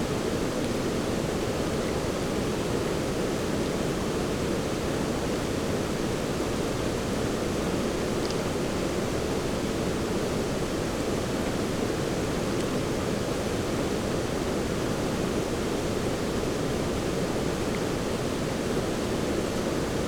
Kerkerbachtal, Hofen, Runkel, Deutschland - sewage plant, small waterfall
small waterfall of the Kerkerbach stream (ca. 3m altitude) near a waste water plant
(Sony PCM D50, Primo EM272)
Landkreis Limburg-Weilburg, Hessen, Deutschland, 7 February 2022